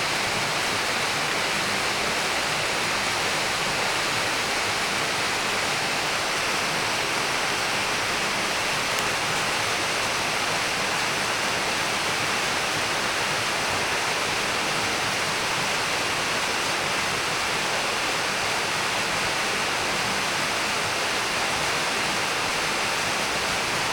Barrage de Thurins
Au pied du barrage
Thurins, France, November 2010